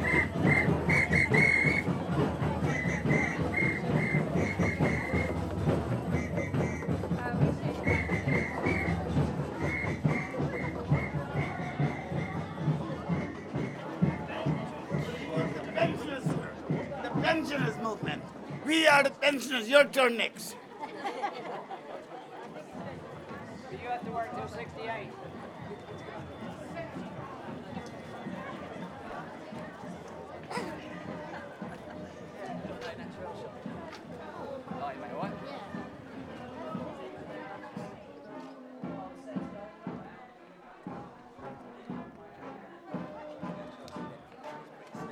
Tolpuddle Festival 2010 / PVA / World listening day